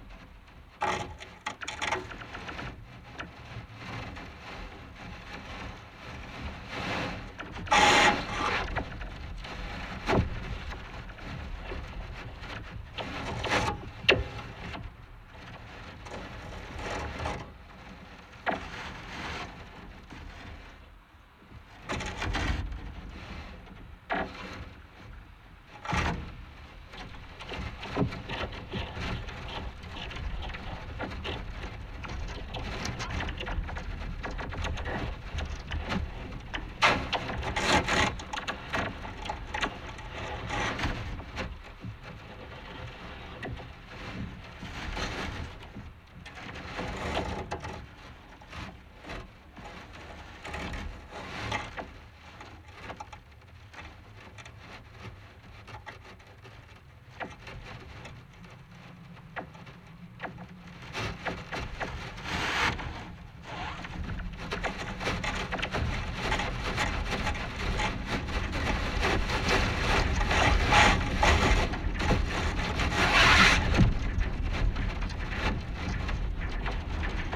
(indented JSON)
{
  "title": "A. Juozapavičiaus pr., Kaunas, Lithuania - Two flagpoles",
  "date": "2021-04-22 14:17:00",
  "description": "Contact microphone recording of two flagpoles standing next to each other. Four microphones were attached to the cables that are holding the flags, that are highly transmissive of every tiny movement of the flag. Changing direction and strength of the wind results in a vast variety of micro movements, resulting in a jagged and ever-changing soundscape. Recorded using ZOOM H5.",
  "latitude": "54.87",
  "longitude": "23.94",
  "altitude": "34",
  "timezone": "Europe/Vilnius"
}